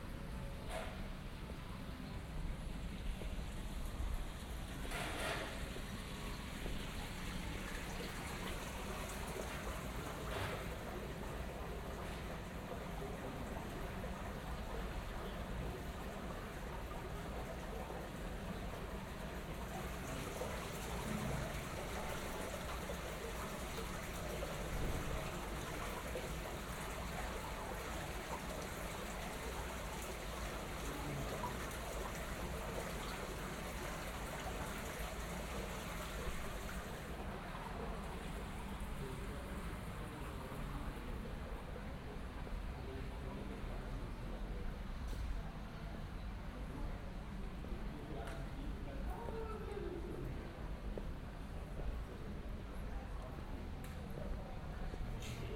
Aarau, Rathausgasse, Night, Schweiz - Nachtbus
While during the evening walks the busses were absent, now one crosses the recording
Aarau, Switzerland, 28 June 2016